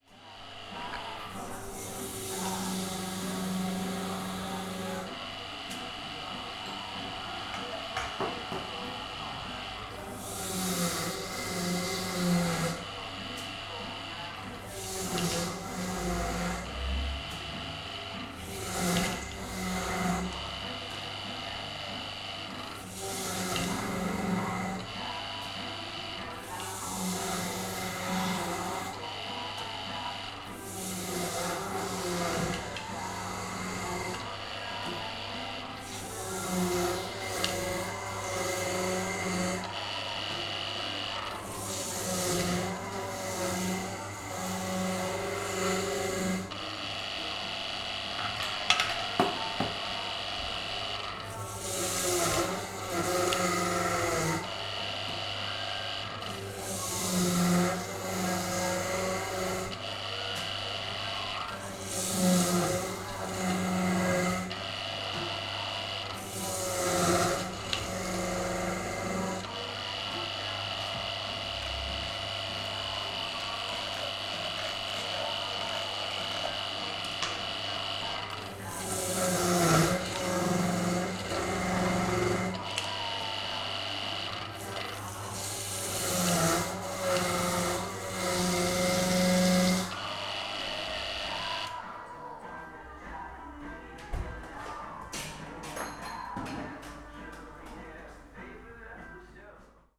Berlin, Hobrecht- / Bürknerstr. - Bürknereck
barkeeper prepares orange juice. early evening, no guests except the recordist.
Berlin, Germany, 29 September